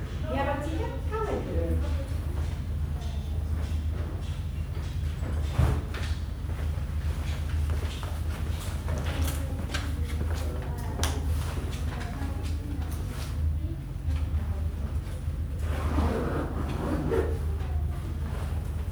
Homerton Hospital, Clapton, London, UK - Waiting for a blood test, Homerton Hospital
Usually when I go to this hospital for a blood test it is full of people waiting. Today was amazingly quiet, only 3 others. Even the nurse remarked on nobody being there. The piercing bleeps were just as loud though and it's remarkable how much low frequency sound is present in hospitals.